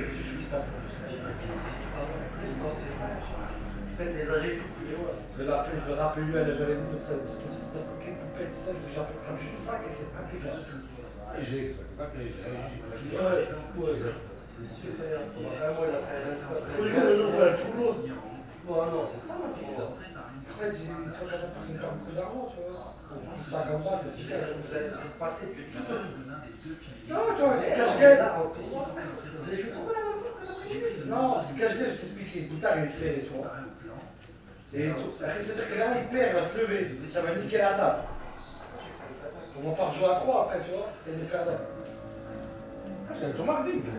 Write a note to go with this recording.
Living in a longhouse, my bother has funny neighbours. It's a motivated team of poker players. They play very often, sometimes win a lot but also sometimes loose very much ! On this quiet evening, they are discussing in their home.